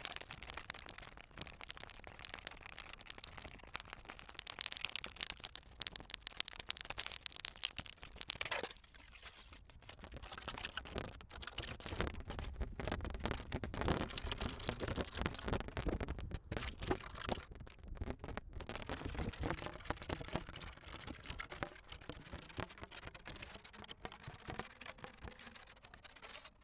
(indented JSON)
{
  "title": "a potatoe field near Gapyeong - streamers",
  "date": "2014-09-01 12:00:00",
  "description": "streamers of plastic tape used to scare away birds from fields of new vegetables move with the breeze. Rural Gangwon-do. PCM-10",
  "latitude": "37.82",
  "longitude": "127.52",
  "altitude": "60",
  "timezone": "Asia/Seoul"
}